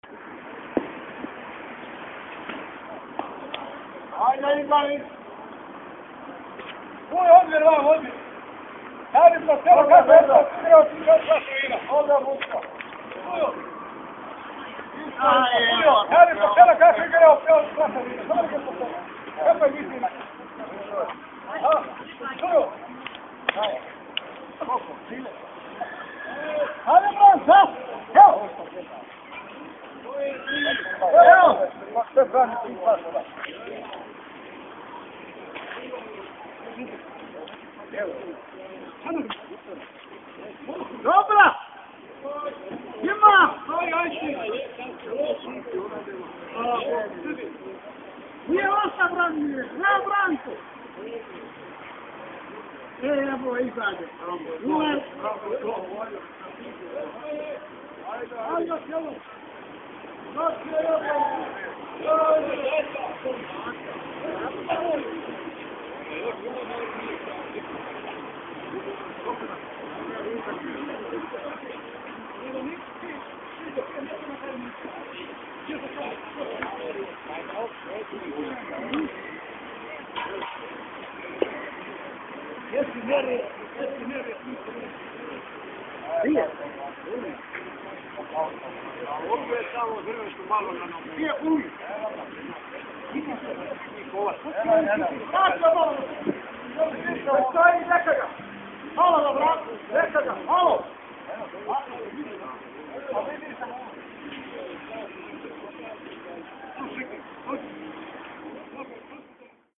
Zickenplatz im Dezember
Zickenplatz, 03.12.07 around 05:00 pm.
The turkish boccia-players on the Zickenplatz won't be stopped from playing by the early darkness and have to replace the lack of sight with a lot of shouting and hollering.